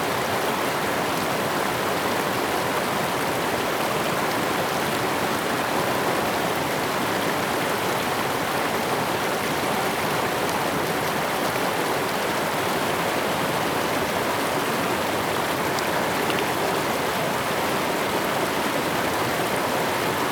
成功里, Puli Township, Nantou County - Brook
Brook, In the river, stream
Zoom H2n MS+XY